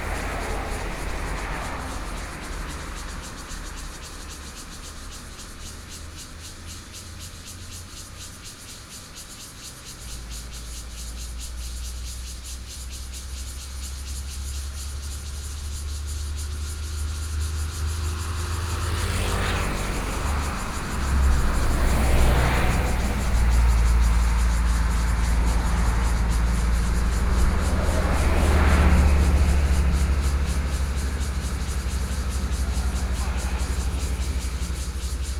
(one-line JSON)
{"title": "Jianxing Rd., Taitung City - Cicadas sound", "date": "2014-09-04 17:48:00", "description": "Cicadas sound, Traffic Sound", "latitude": "22.70", "longitude": "121.04", "altitude": "52", "timezone": "Asia/Taipei"}